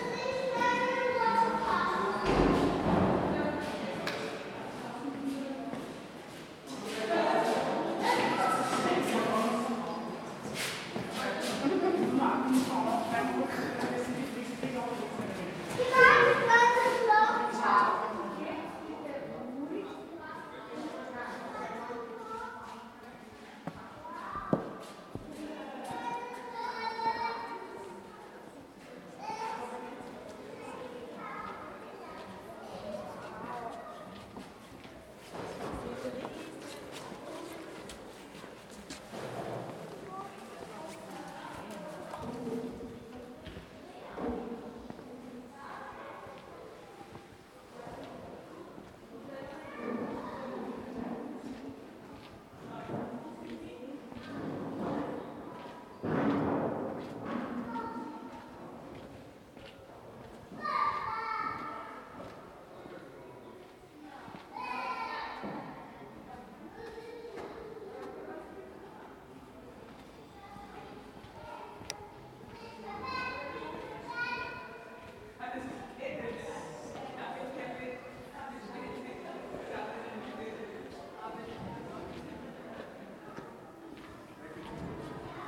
Siller-Straße, Strasshof an der Nordbahn, Österreich - Remise/Depot Railwaymuseum
Eisenbahnmuseum Strasshof: Remise/Depot Railwaymuseum
Niederösterreich, Österreich, 1 May 2022